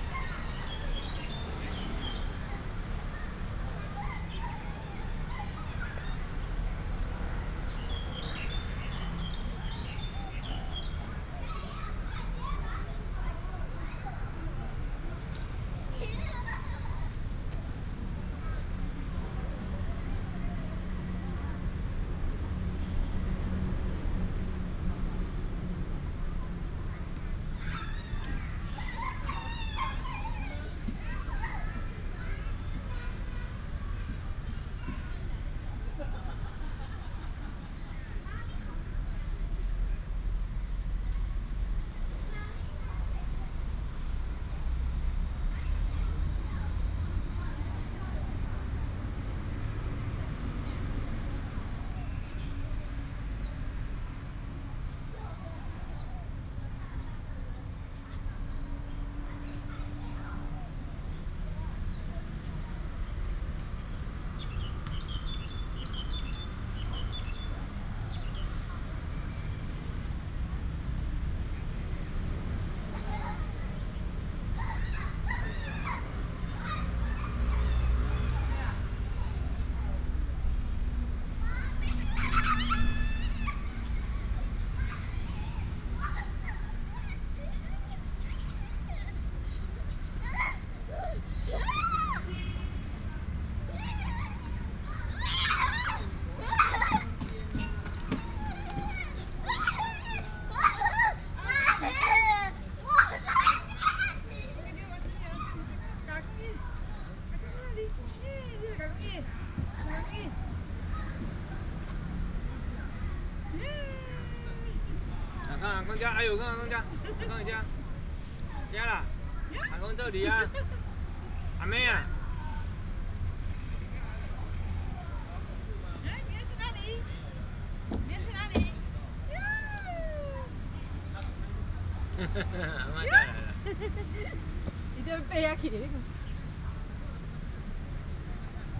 仁愛兒童公園, Banqiao Dist., New Taipei City - in the Park
in the Park, Traffic sound, Child, sound of the birds